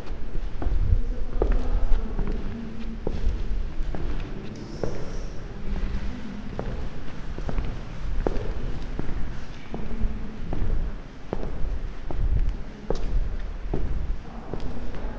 Walking through Barrage Vauban, a covered bridge. Some people passing by. Recorded with an Olympus LS 12 Recorder using the built-in microphones. Recorder hand held, facing slightly downwards.